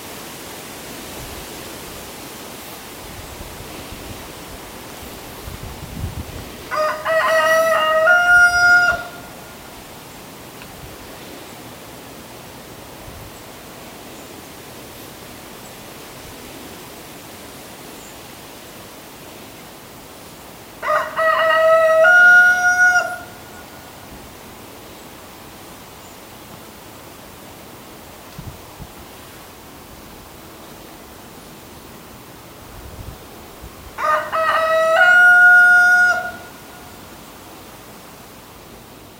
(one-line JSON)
{"title": "rural atmosphere, a. m. - Propach, rooster", "latitude": "50.85", "longitude": "7.52", "altitude": "263", "timezone": "GMT+1"}